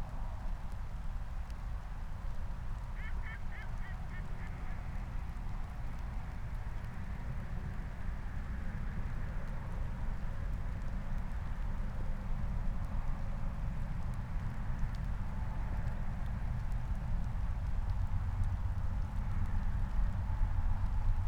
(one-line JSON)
{"title": "Moorlinse, Berlin Buch - near the pond, ambience", "date": "2020-12-23 22:19:00", "description": "22:19 Moorlinse, Berlin Buch", "latitude": "52.64", "longitude": "13.49", "altitude": "50", "timezone": "Europe/Berlin"}